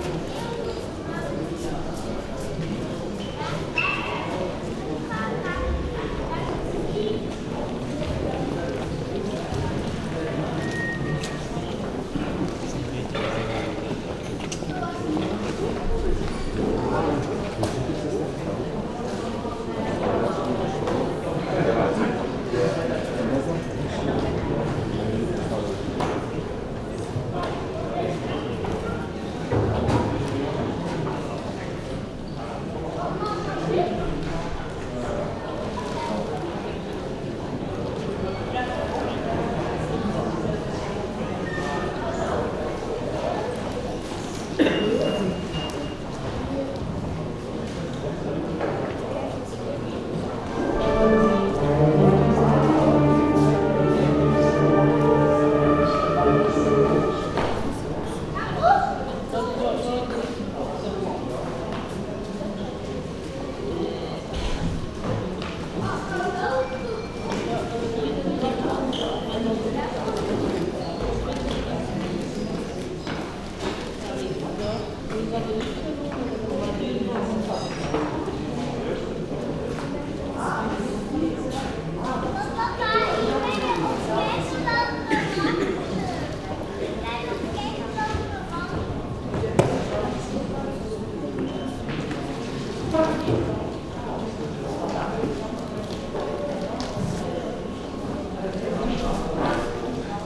Aalst, België - Utopia library
The great and beautiful Utopia library. It is intended as a meeting place, so silence is not required.